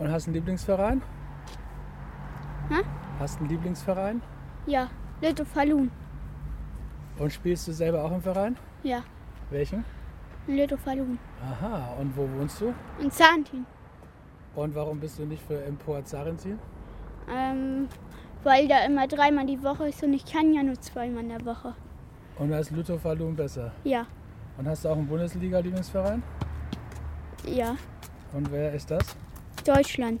zarrentin - auf dem bolzplatz
Produktion: Deutschlandradio Kultur/Norddeutscher Rundfunk 2009
Zarrentin, Germany, 8 August